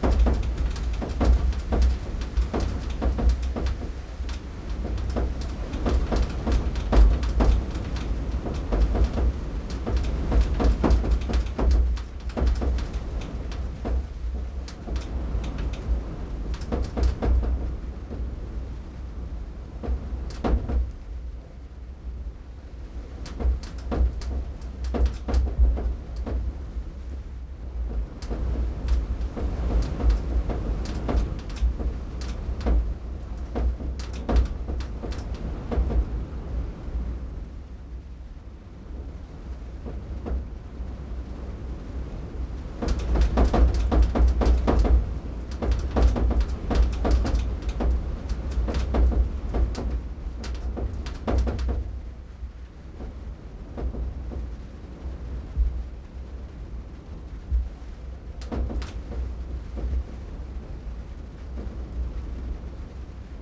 BLOWING IN THE WIND - LOFOTEN - Nesje, 8360 Bøstad, Norvège - BLOWING IN THE WIND - LOFOTEN
CABANE AVEC TOLE DE TOIT ARRACHÉe DANS LE VENT ET LA PLUIE.
SD MixPre6II + DPA4041 dans Cinela PIA2 + GEOPHONE